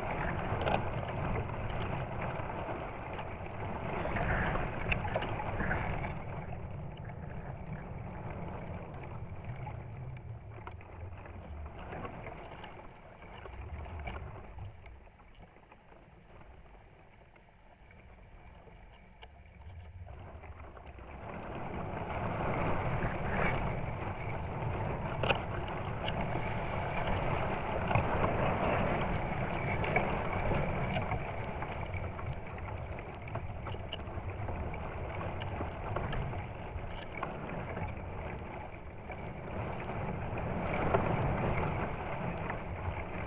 Isle of Mull, UK - Elderberry Tree
I sat under this tree in a comfortable chair for most of my visit to Mull overlooking the loch. As the weather changed throughout the day and night I made recordings of the environment. The tide came in and out various winds arrived along with all the birds and animals visiting the shore. To the left of me were a line of pine trees that sang even with the slightest of breezes, and to the left was a hillside with a series of small waterfalls running down its slope. I became aware of the sounds the branches of the tree were making in the various winds and used a pair of contact mics to make the recording. Sony M10.
Scotland, United Kingdom